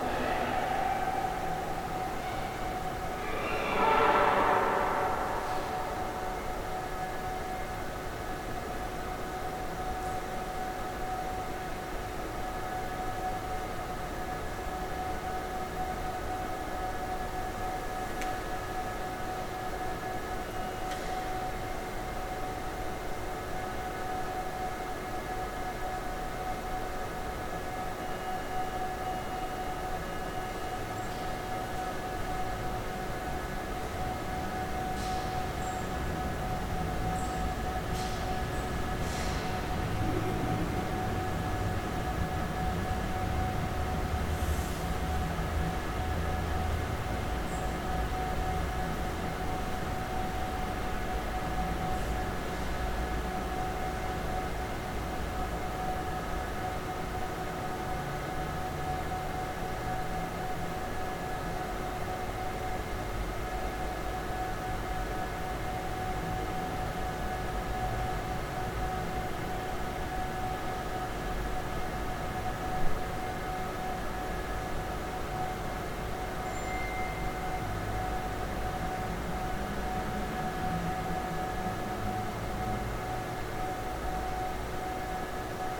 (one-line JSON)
{"title": "Kaunas, Kaunas, Lithuania - Train station waiting hall atmosphere", "date": "2021-02-26 19:00:00", "description": "Large reverberant waiting hall of Kaunas city train station. Recorded with ZOOM H5.", "latitude": "54.89", "longitude": "23.93", "altitude": "37", "timezone": "Europe/Vilnius"}